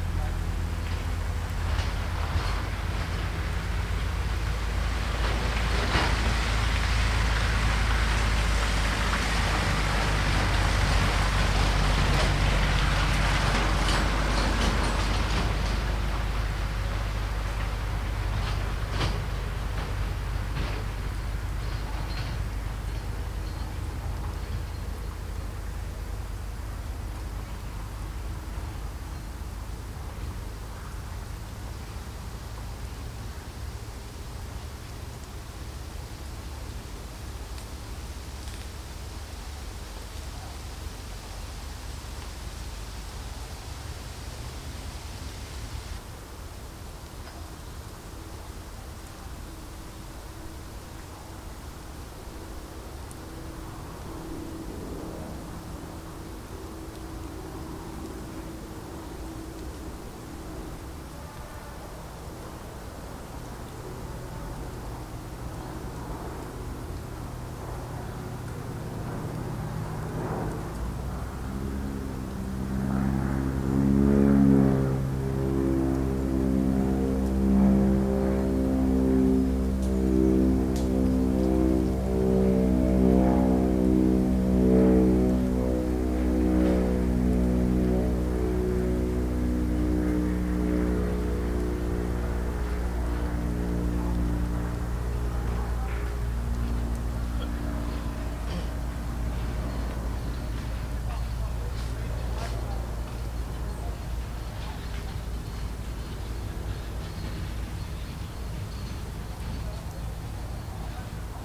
Flugplatz Pirna-Pratzschwitz, Söbrigener Weg, Pirna, Deutschland - flight day
Glider pilots take off with the help of a cable winch and in between take off and land small aircraft